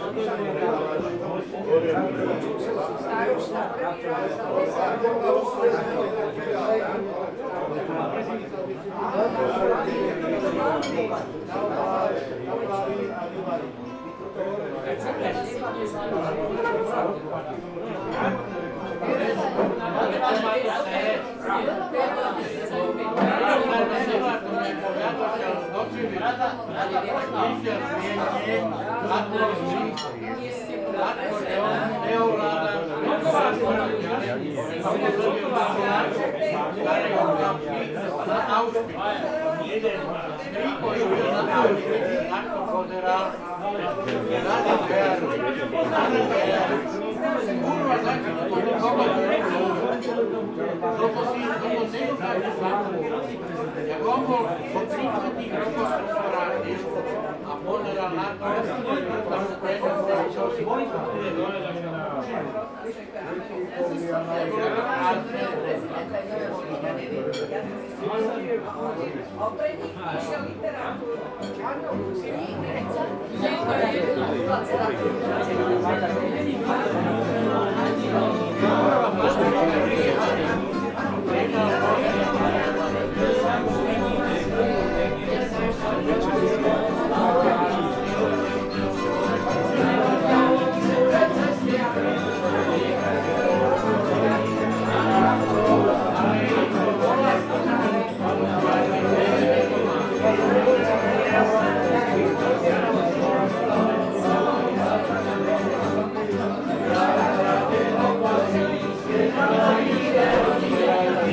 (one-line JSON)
{"title": "Bratislava, Drevená dedina - Trampský večer - Tramp evening", "date": "2014-03-05 19:42:00", "description": "Every wednesday evening Bratislava‘s Tramps are gathering in some of the few remaining long standing pubs to celebrate their tradition, drinking and singing together.", "latitude": "48.16", "longitude": "17.11", "timezone": "Europe/Bratislava"}